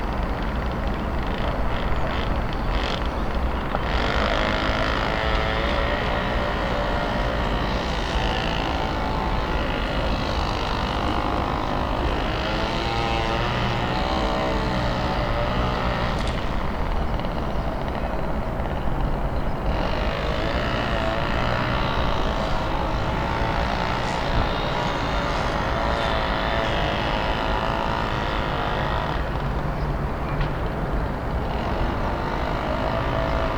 a man overdriving a string trimmer in the early morning hours. the machine grinding, chugging and roaring as it's eating itself into the exuberant grass.